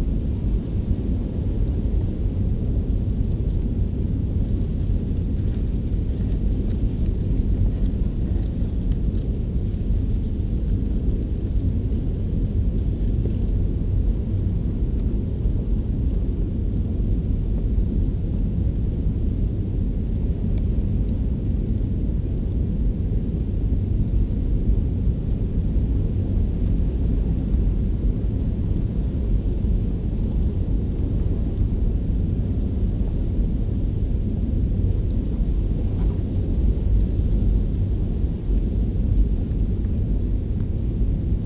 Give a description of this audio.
microphones in dried grass and the sound of roaring waters...